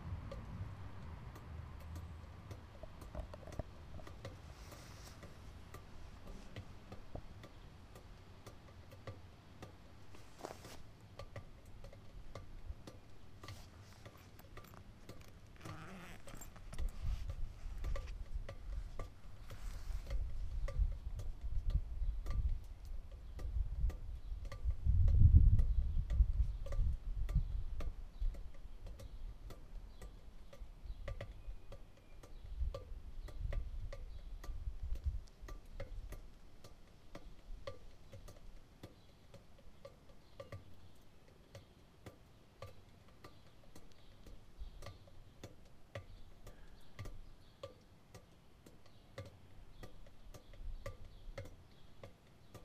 Große Ackerhosgasse, Altstadt, Erfurt, Deutschland - Drain Percussion
Prominent are the percussive sounds occurring in a drain pipe, birds & cars make up the background of this soundscape on an early Sunday morning in Erfurt.
Deutschland, Europe